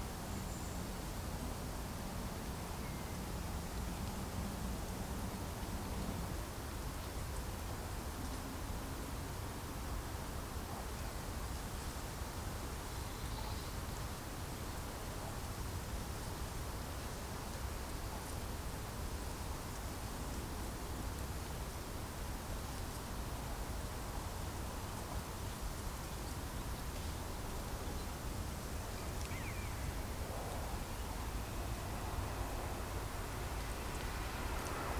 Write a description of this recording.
Glider pilots take off with the help of a cable winch and in between take off and land small aircraft